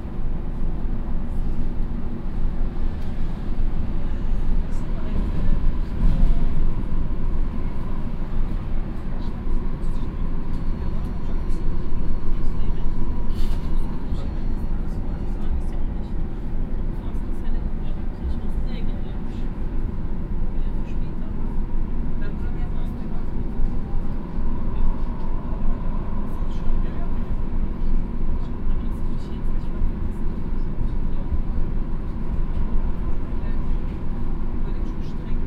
Inside a tram - announcement of the next station
soundmap nrw - social ambiences and topographic field recordings
Deutschland, European Union, 9 May 2010, ~12:00